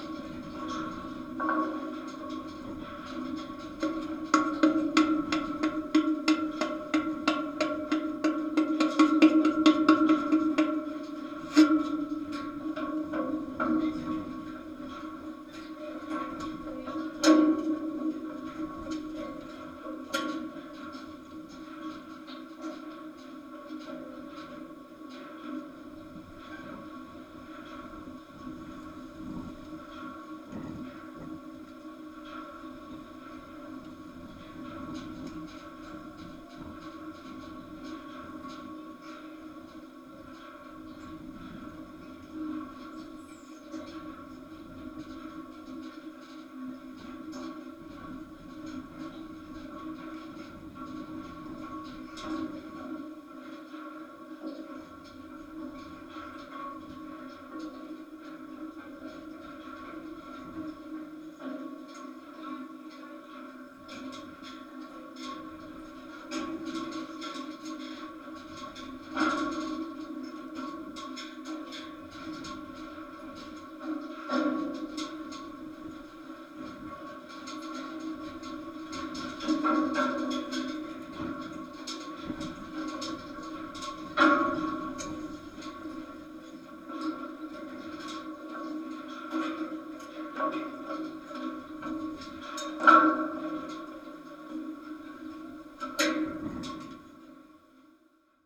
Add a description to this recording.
contact microphone recording. the tourists climbs to watchtower and then my friend tries to play some percussion...